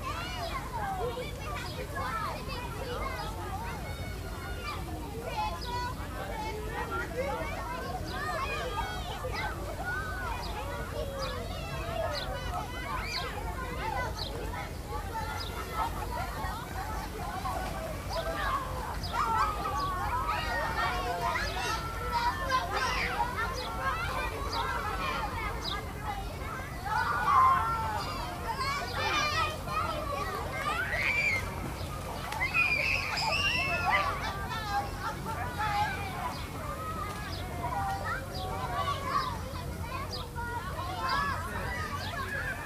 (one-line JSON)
{"title": "Brooklyn Pool", "date": "2010-07-19 00:06:00", "description": "Public Pool Brooklyn, NY (Fort Greene USA 94 degrees farenheit", "latitude": "40.69", "longitude": "-73.97", "altitude": "22", "timezone": "America/New_York"}